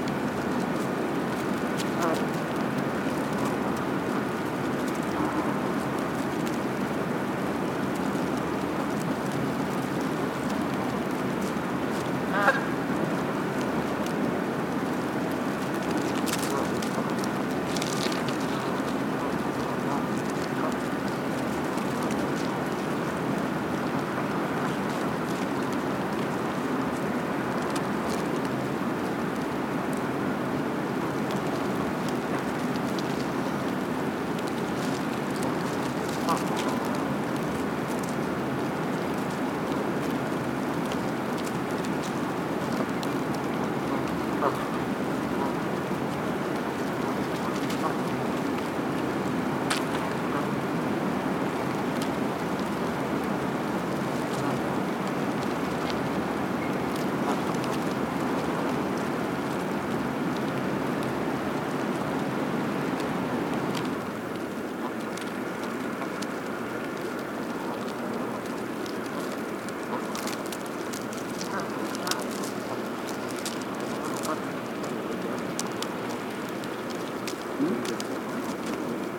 Geese walking on ice whilst pecking the ground for food. Constant HVAC drone is audible, along with occasional goose honks, wing flapping, truck sounds and human voices.
Recorded with a Sennheiser ME 66
New York, United States, February 2021